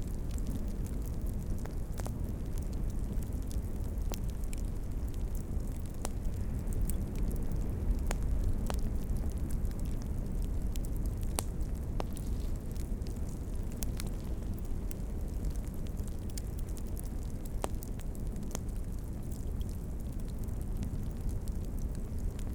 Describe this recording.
a small fire crackling underneath the tea tree's.